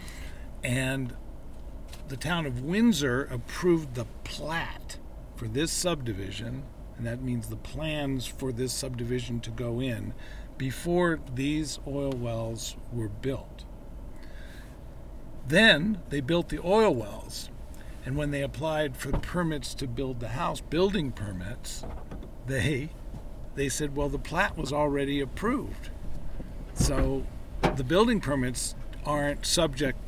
Windsor, CO, USA - Fracking Tour
A group of journalists visits a neighborhood built beside oil fracking wells.
9 November 2018, ~11:00